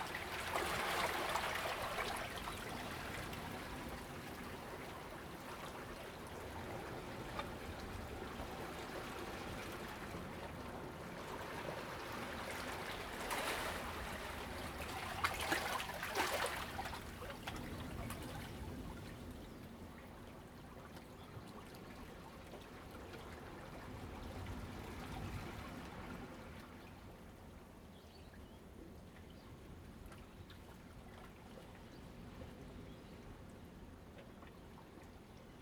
Hiding in the rock cave, Sound of the tide
Zoom H2n MS +XY